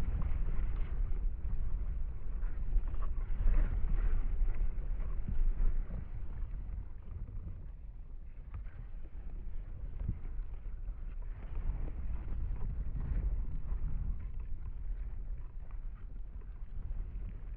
Mic/Recorder: Aquarian H2A / Fostex FR-2LE
10 May 2009, 18:42